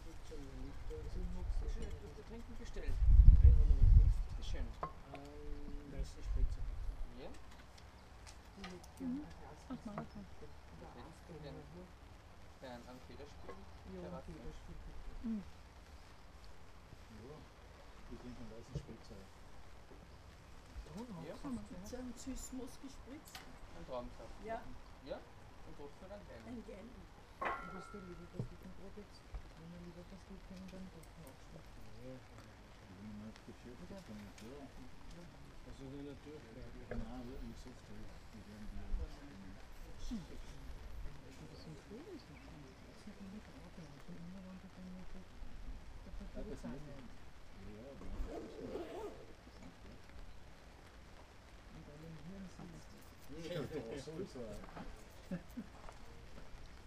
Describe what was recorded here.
at an inn, shortly before dinner's to arrive. I love the choir pieces made by human voices talking to each other leisurely.